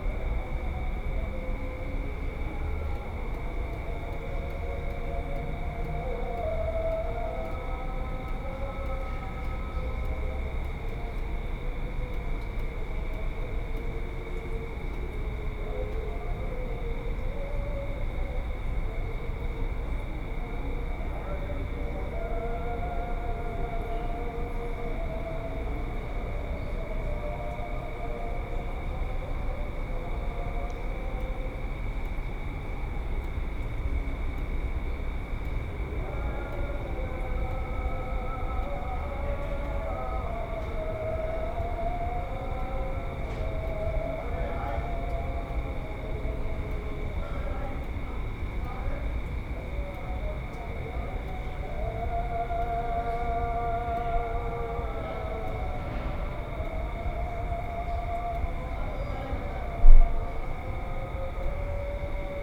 Broads Rd, Lusaka, Zambia - Lusaka evening prayers...
call for evening prayers from Lusaka Mosques....
Lusaka District, Lusaka Province, Zambia, 18 June 2018, 19:20